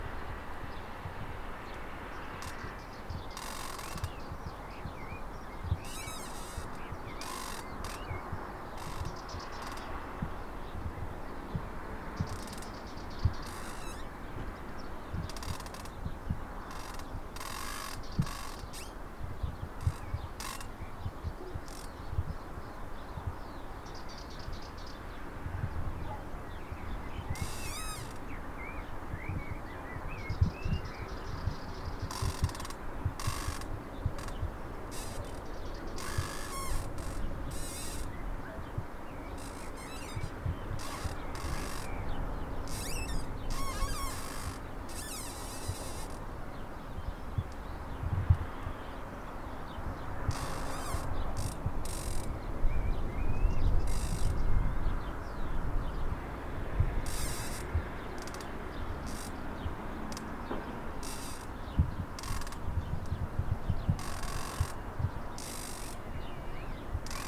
Barrio Plateria, Monteagudo, Murcia, España - Biofonía
Ciprés crujiendo al mecer del viento, una suerte de chicharra simulada.
Grabado con Zoom H3VR.
Cypress cracking in the swaying of the wind, a kind of simulated cicada.
Recorded with Zoom H3VR.
14 April 2021, 11:51